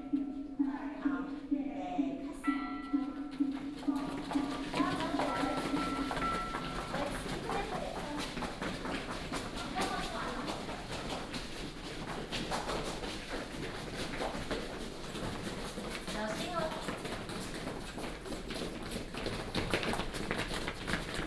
善化堂, 埔里鎮珠格里 - Community Theatre and chanting
Community Theatre courses, On the square in the temple chanting, Rainy Day